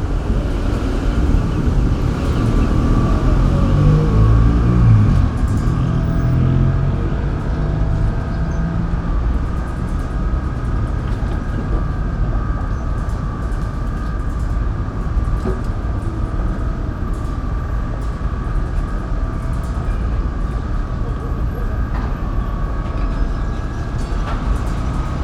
At the tram stop, cold and windy, the wires aboves start moving one against another.
PCM-M10 internal microphones.